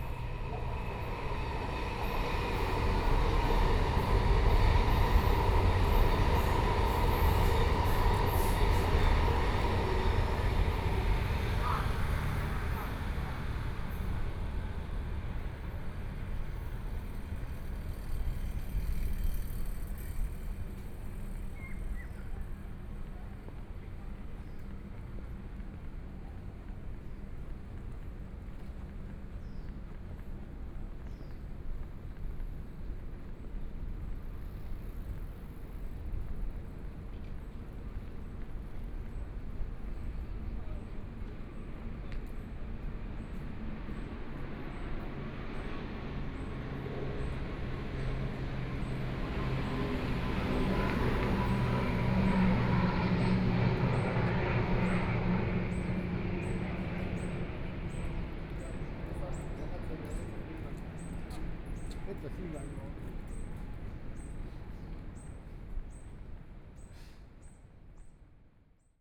中山區集英里, Taipei City - walking in the Street
walking in the Street, MRT train sound